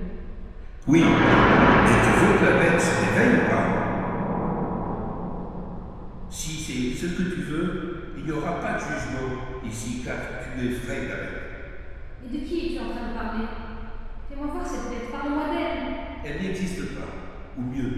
R. da Cadeia, Elvas, Portugal - Sound piece
Vasco Araujo sound piece @ Museu de Arte Contemporanea de Elvas. Recorded with a pair of primo 172 omni mics in AB stereo configuration into a SD mixpre6.